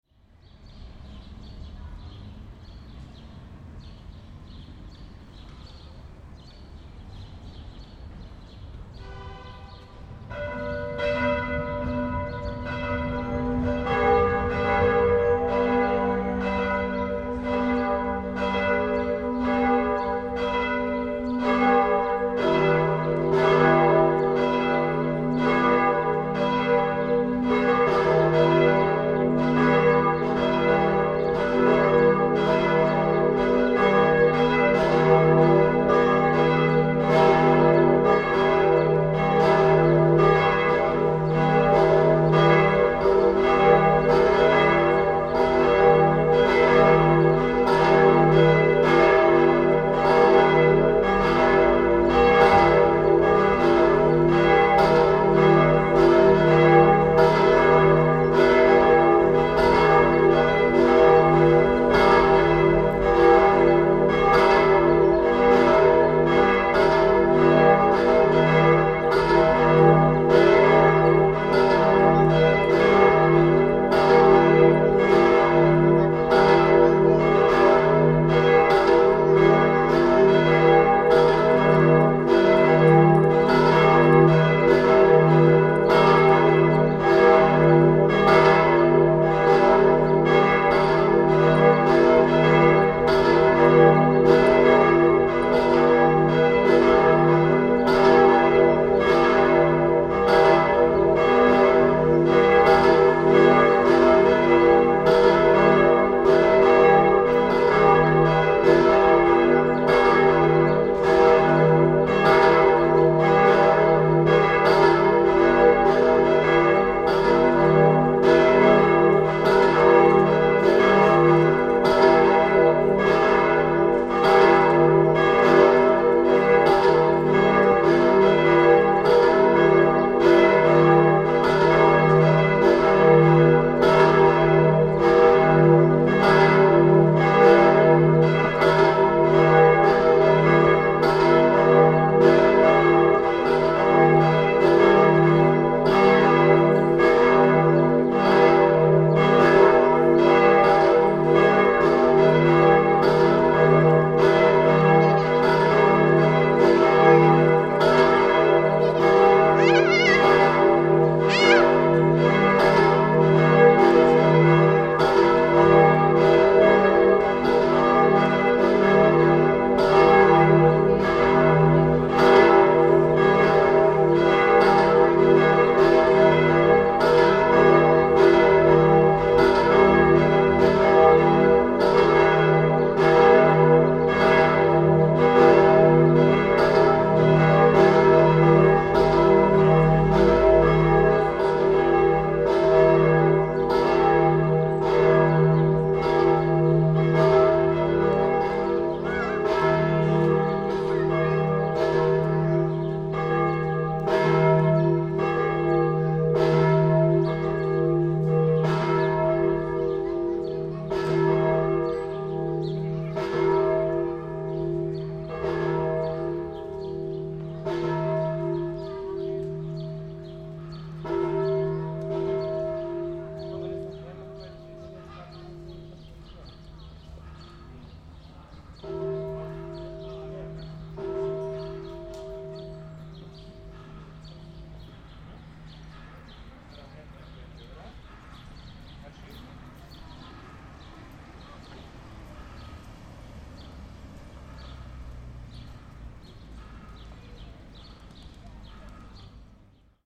zionskirche, glocken
Zionskichplatz, Kirchenglocken, Sonntag Abend, 13.07.2008, 18:00
Reflexionen des Läutwerks an den gegenüberliegenden Häuserwänden.
13 July 2008, 18:00